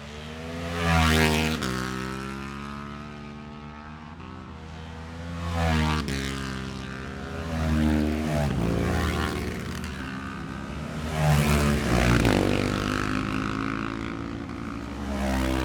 Jacksons Ln, Scarborough, UK - gold cup 2022 ... twins practice ...
the steve henshaw gold cup 2022 ... twins practice ... dpa 4060s on t-bar on tripod to zoom f6 ...